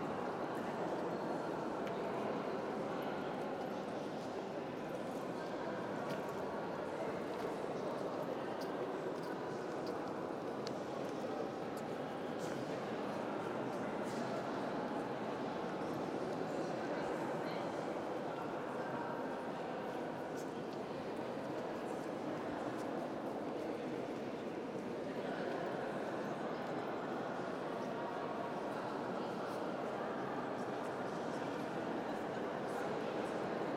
Museum Insel, Berlin, Germany - Pergamon Museum